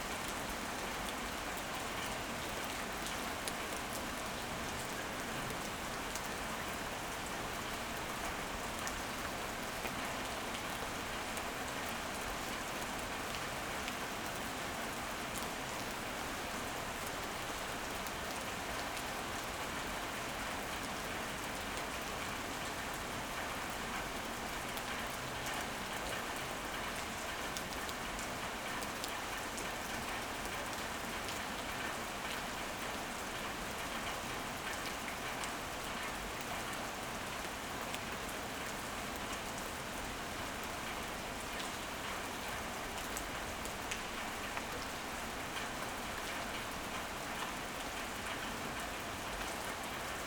Ascolto il tuo cuore, città. I listen to your heart, city. Several chapters **SCROLL DOWN FOR ALL RECORDINGS** - Its one oclock with rain in the time of COVID19 Soundscape
"It's one o'clock with rain in the time of COVID19" Soundscape
Chapter LXXIII of Ascolto il tuo cuore, città. I listen to your heart, city.
Monday May 11th 2020. Fixed position on an internal (East) terrace at San Salvario district Turin, sixty two days after (but eoight day of Phase II) emergency disposition due to the epidemic of COVID19.
Start at 1:14 a.m. end at 1:32 a.m. duration of recording 18’:15”
Piemonte, Italia, 11 May 2020, ~01:00